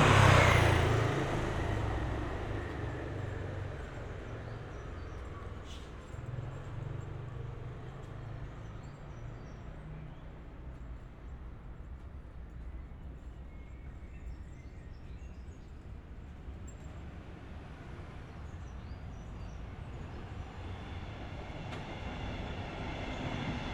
Eifelwall, Köln - multiple sonic traffic pattern
the sonic pattern of traffic at this place is quite interesting: trains of all kind on different levels, cars, bikes, pedestrians. the architecture shapes the sound in a very dynamic way. traffic noise appears and fades quickly, quiet moments in between.
(SD702, Audio Technica BP4025)
Deutschland, European Union, 25 April 2013, 20:05